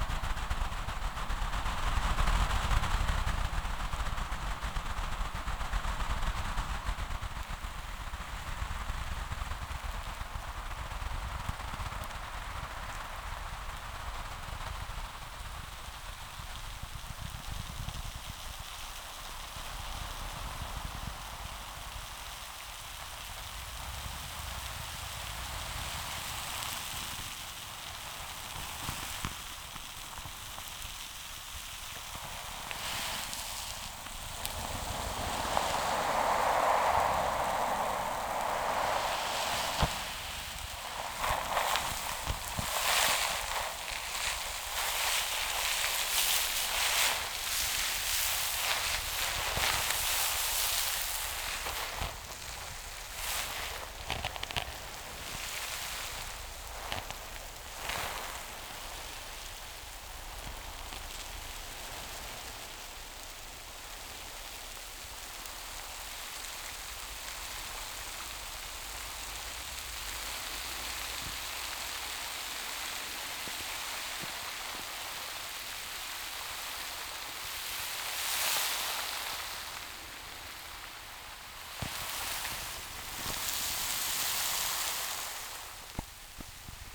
Lithuania, Utena, underwater spring (hydrophone)
heres underwater spring, which just fountain in the small river, bubbling sands from the bottom